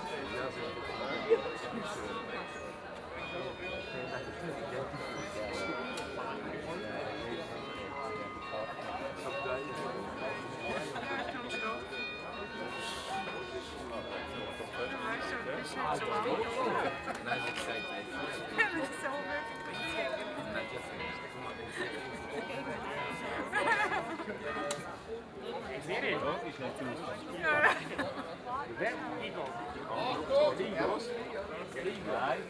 Aarau, Kirchplatz, Canon, Schweiz - Kanone
Part of the preperations for Maienzug is the shooting of two canons. People are obviously enjoying this archaic event.